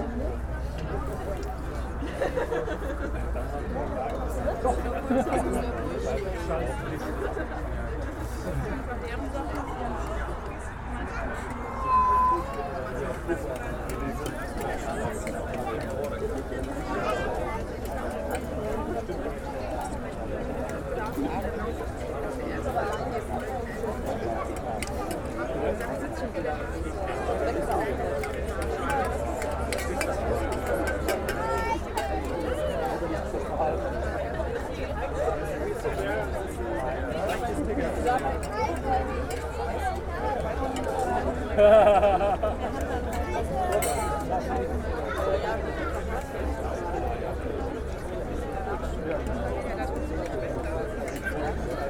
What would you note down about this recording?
a team was cooking food in huge pots all evening. people eating, sounds of tin plates and relaxed conversations, at the Klimacamp Manheim. (Sony PCM D50, DPA4060)